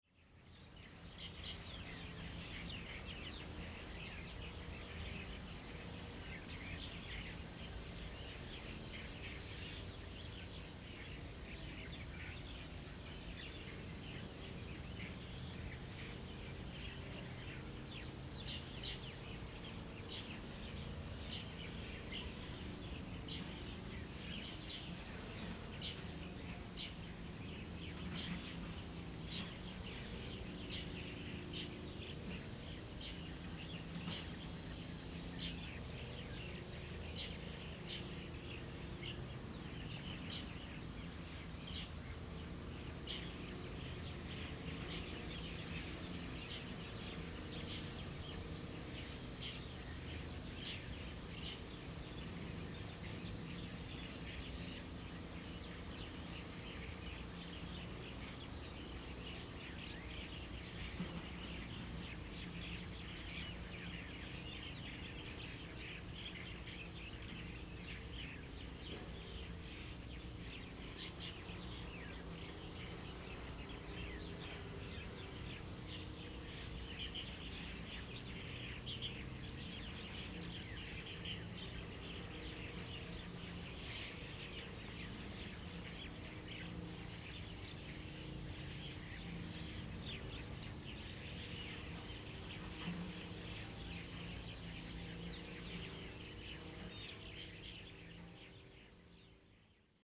勇士堡, Lieyu Township - Birds singing
Birds singing, Abandoned military facilities
Zoom H2n MS +XY
4 November, ~09:00